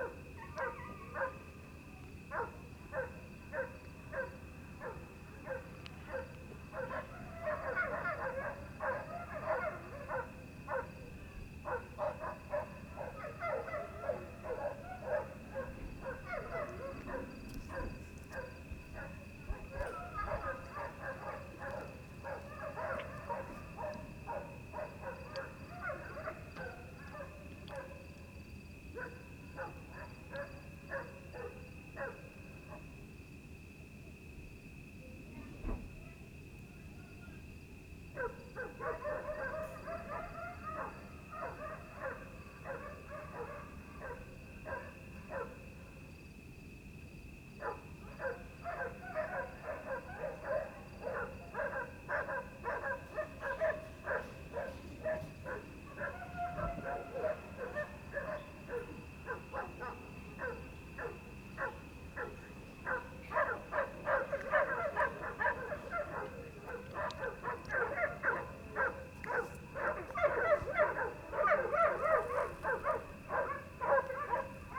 the dogs of a nearby farm went crazy about something, some from the village responded
(Sony PCM D50, Primo EM172)